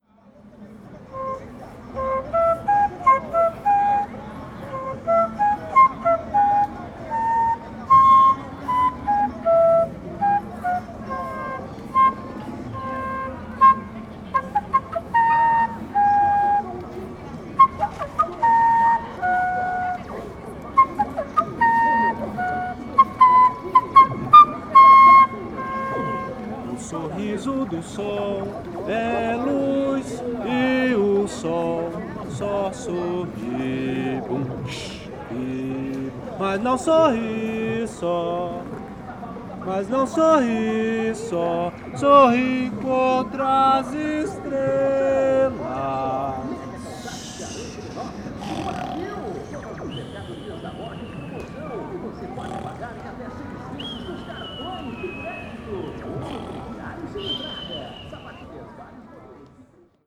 Calçadão de Londrina: Vendedor de flautas e artesanatos - Vendedor de flautas e artesanatos / Flute and craft seller
Panorama sonoro: Descrição do contexto da gravação: Vendedor informal de artesanato e instrumentos musicais instalado no Calçadão nas proximidades da Praça Willie Davids tocava uma música de sua autoria utilizando uma flauta de cano feito por ele mesmo. Ao entorno, lojas anunciavam produtos e promoções a partir de caixas amplificadoras. Outros vendedores informais paravam para conversar e outros poucos pedestres demonstravam interesse pelos produtos vendidos ou pela música tocada.
Sound panorama:
Informal seller of handicrafts and musical instruments installed on the Boardwalk near Willie Davids Square played a song of his own using a pipe made by himself. In the surroundings, stores announced products and promotions from amplifiers. Other informal salespeople stopped to talk, and a few other pedestrians were interested in the products sold or the music played.